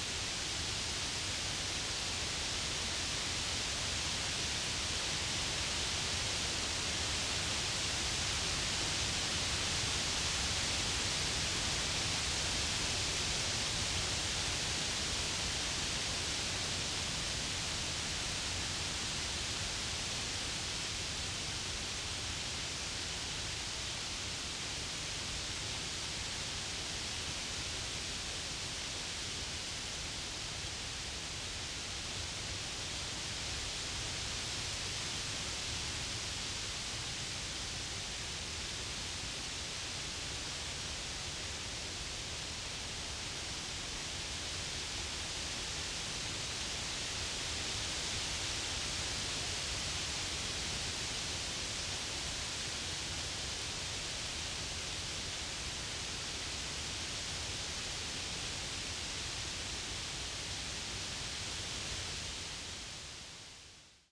Former rail line, Jindřichovice pod Smrkem, Czechia - wind in the forest trees
A mixed forest offers a slightly varied 'white noise' sound in the wind. Tascam DR-100 with primos.